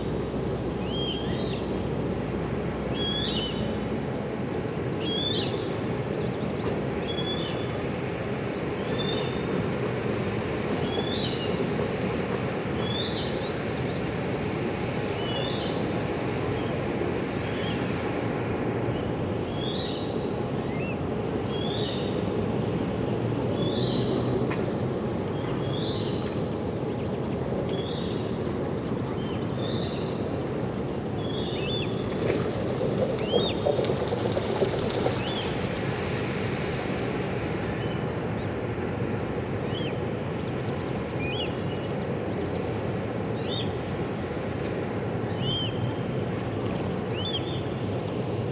A warm and sunny day at the Historic Delfshaven. You can hear the birds flying around and moving in the water as well as few people passing by. At 4´55 you can hear the carillon from Pelgrimvaderskerk. Recorded with a parabolic Dodotronic mic
Zuid-Holland, Nederland, 19 July 2021, 12:55